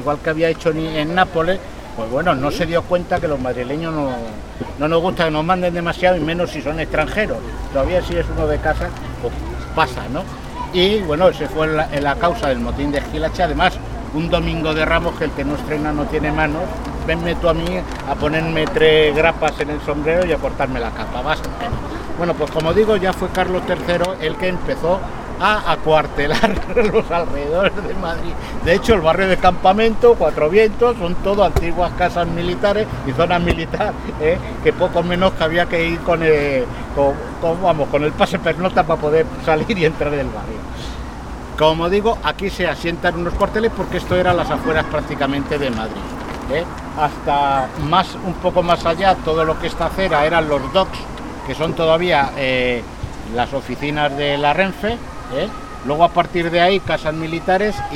{"title": "Pacífico, Madrid, Madrid, Spain - Pacífico Puente Abierto - Transecto - 09 - CC Daoiz y Velarde (antiguos Cuarteles de Artillería)", "date": "2016-04-07 19:55:00", "description": "Pacífico Puente Abierto - CC Daoiz y Velarde (antiguos Cuarteles de Artillería)", "latitude": "40.40", "longitude": "-3.68", "altitude": "623", "timezone": "Europe/Madrid"}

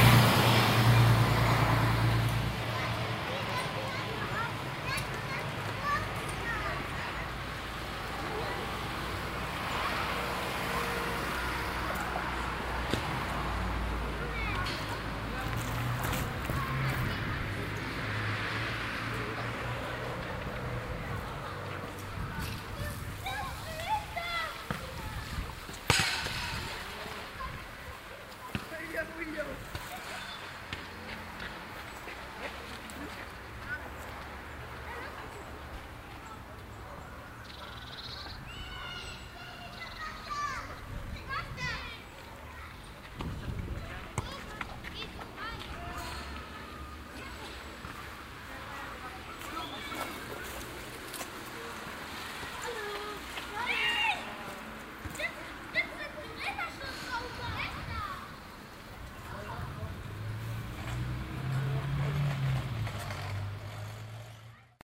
{"title": "monheim, berliner ring, kinder am strasse - monheim, berliner ring, kinder an strasse", "description": "afternoon, kids playing on the streets, traffic\nsoundmap nrw:\nsocial ambiences/ listen to the people - in & outdoor nearfield recordings", "latitude": "51.08", "longitude": "6.89", "altitude": "46", "timezone": "GMT+1"}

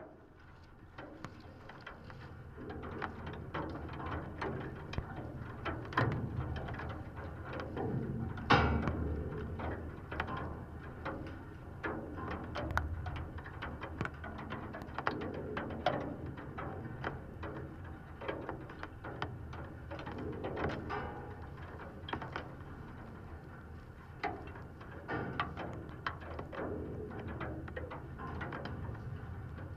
{"title": "Anyksciai, Lithuania, new bridge", "date": "2019-12-24 14:10:00", "description": "new, still closed for passangers, metallic bridge through river Sventoji. drizzle rain. contact microphones on the construction", "latitude": "55.49", "longitude": "25.06", "altitude": "67", "timezone": "Europe/Vilnius"}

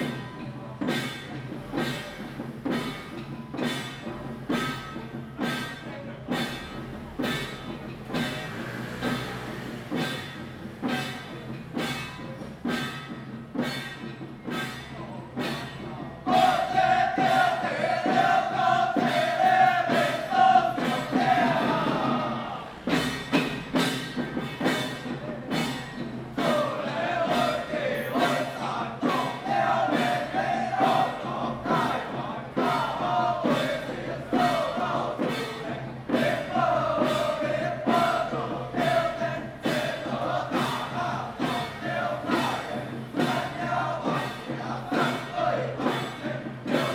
{"title": "Daren St., Tamsui Dist., 新北市 - Traditional troupes", "date": "2015-06-20 16:36:00", "description": "Traditional festival parade, Traditional troupes\nZoom H2n MS+XY", "latitude": "25.18", "longitude": "121.44", "altitude": "45", "timezone": "Asia/Taipei"}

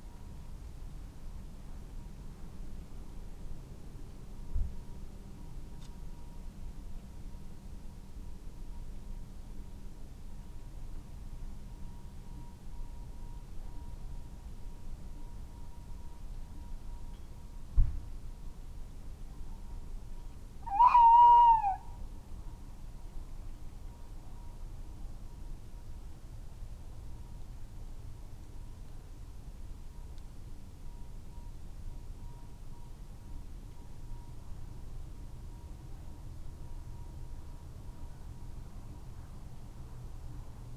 {"title": "Unnamed Road, Malton, UK - tawny owls ...", "date": "2019-05-15 22:52:00", "description": "Tawny owls ... male territorial song ... later ... tremulous hoot call ... SASS ...", "latitude": "54.12", "longitude": "-0.54", "altitude": "75", "timezone": "Europe/London"}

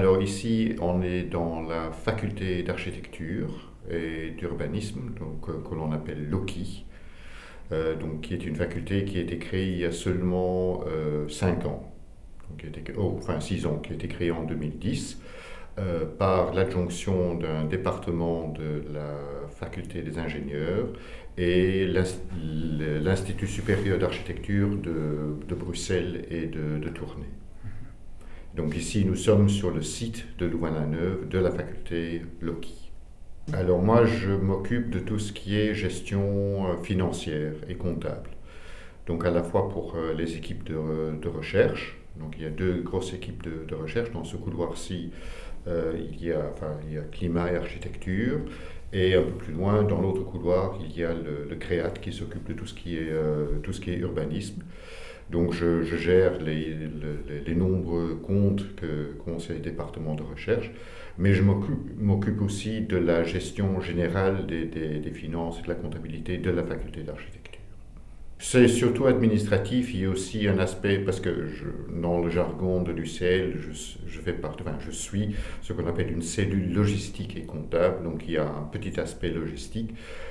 {"title": "Ottignies-Louvain-la-Neuve, Belgique - David Phillips", "date": "2016-03-18 10:30:00", "description": "David Phillips is working in Louvain-La-Neuve since 23 years. He gives his view on the city evolution. His look is very interesting as he's involved in architecture. This is a rare testimony.", "latitude": "50.67", "longitude": "4.62", "altitude": "134", "timezone": "Europe/Brussels"}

